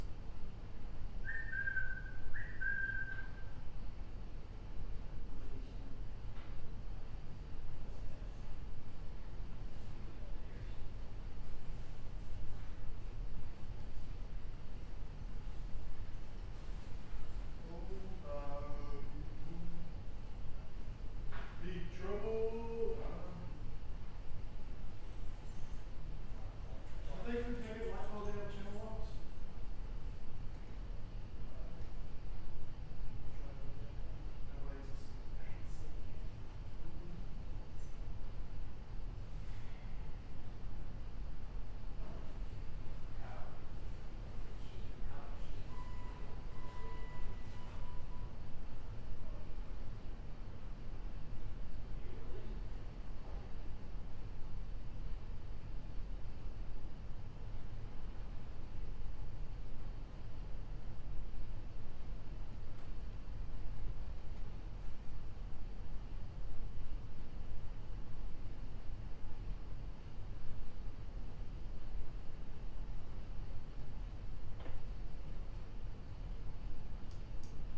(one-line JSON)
{"title": "E. Bronson Ingram Studio Arts Center, Nashville, TN, USA - inside an office with HVAC", "date": "2020-03-16 14:27:00", "description": "recording from inside an office with the custodial staff whistling", "latitude": "36.14", "longitude": "-86.81", "altitude": "169", "timezone": "America/Chicago"}